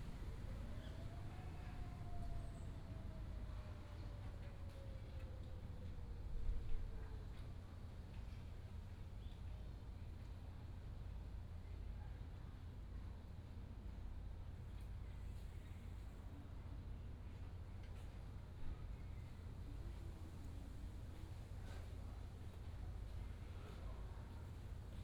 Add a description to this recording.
In the square of the temple, Quiet little village, birds sound